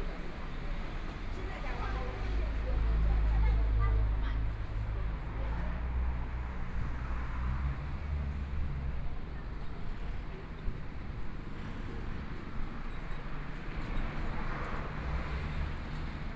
Chang'an E. Rd., Zhongshan Dist. - walking on the Road
walking on the Road, Traffic Sound, Motorcycle Sound, Pedestrians on the road, Binaural recordings, Zoom H4n+ Soundman OKM II